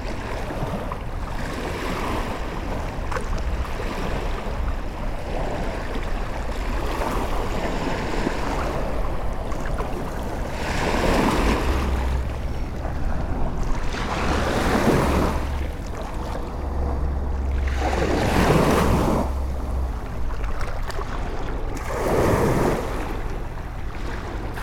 Quillebeuf-sur-Seine, France - High tide
Recording of the high tide in the Seine river, the river is flowing backwards. A big boat is passing by the river.